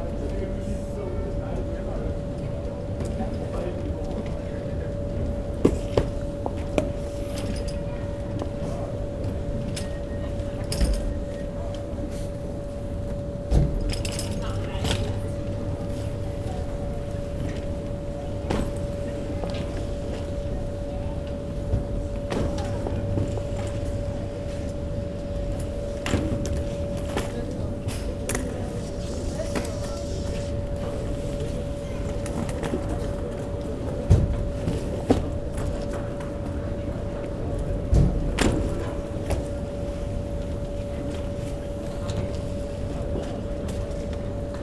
Tallinn airport
baggage arriving on belt